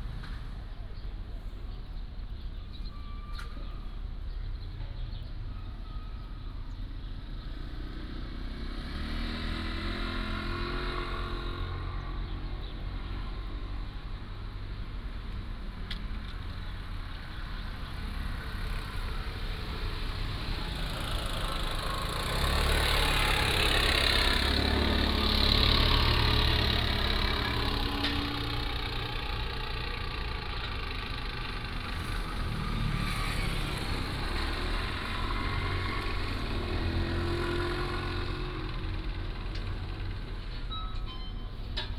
Qingshui Rd., Nangan Township - Small village
In the corner of the road, Small village, Traffic Sound
14 October 2014, 09:15